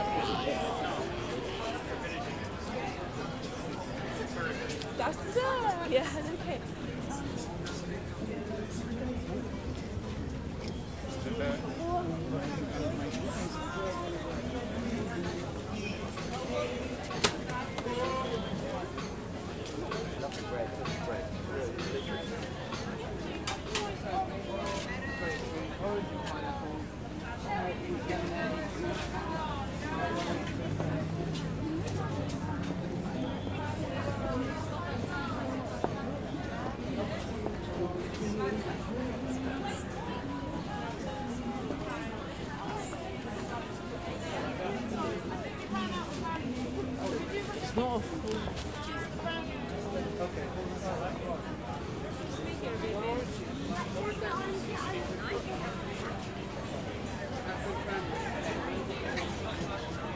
Real Food Market, Southbank, London, UK - Real Food Christmas Market
Walking around the food market.
Zoom H4n